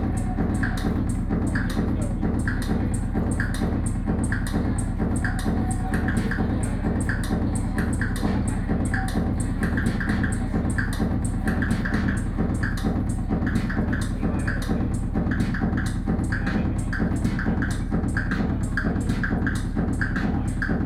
Exhibition OpeningㄝSony PCM D50 + Soundman OKM II
台北市 (Taipei City), 中華民國, 29 June 2013